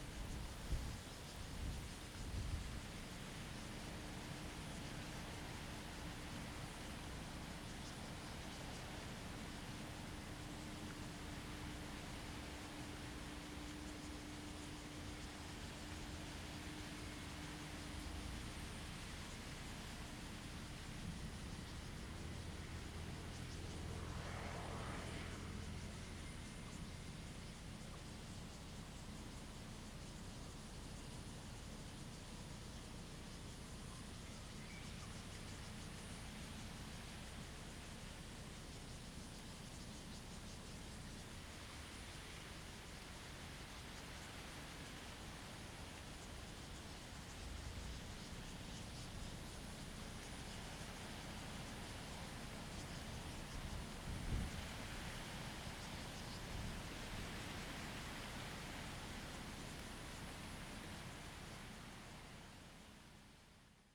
At the lake, traffic sound, The weather is very hot, The sound of the wind and leaves
Zoom H2n MS +XY